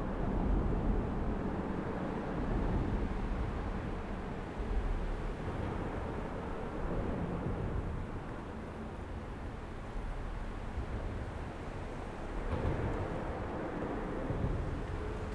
Under St Johns Bridge, parking lot, midafternoon, crow, recorder on car hood, 3 folks present
OR, USA